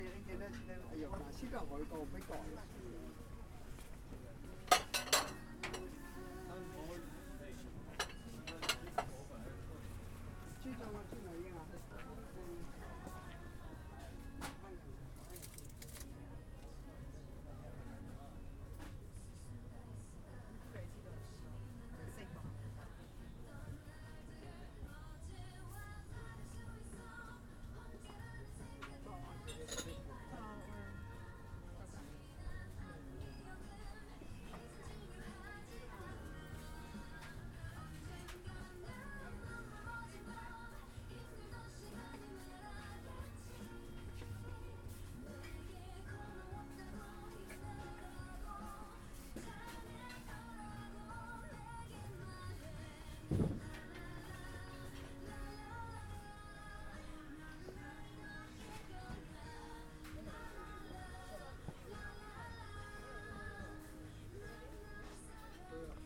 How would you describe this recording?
Standing in the cookware and personal hygiene aisle in J Mart Supermarket